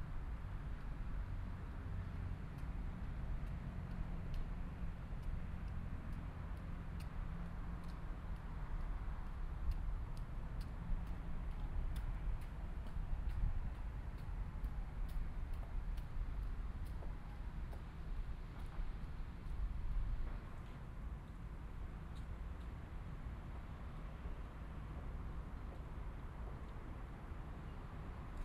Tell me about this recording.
soundmap: cologne/ nrw, project: social ambiences/ listen to the people - in & outdoor nearfield recordings